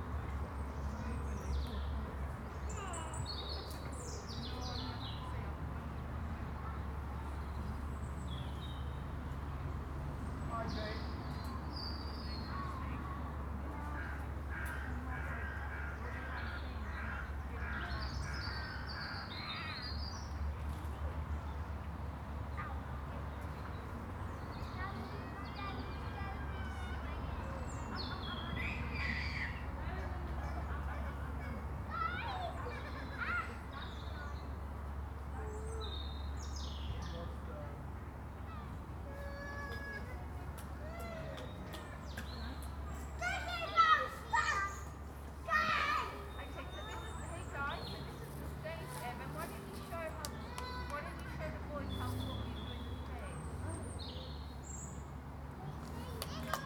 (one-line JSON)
{"title": "Gladstone Park, London - Gladstone Park", "date": "2021-02-27 12:10:00", "description": "Sunny day in Gladstone Park, kids playing", "latitude": "51.56", "longitude": "-0.24", "altitude": "66", "timezone": "Europe/London"}